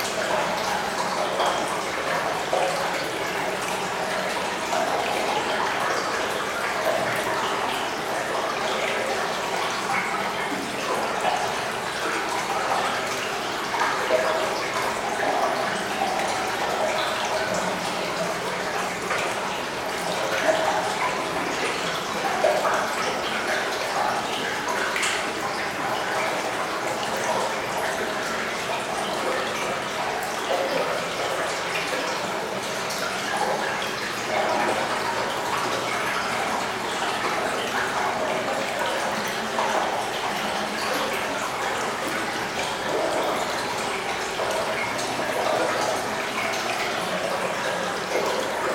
Esch-sur-Alzette, Luxembourg - Mine ambience
Water quietly flowing in a lost and abandoned tunnel in the Cockerill mine. Abiance of this place is very solitary.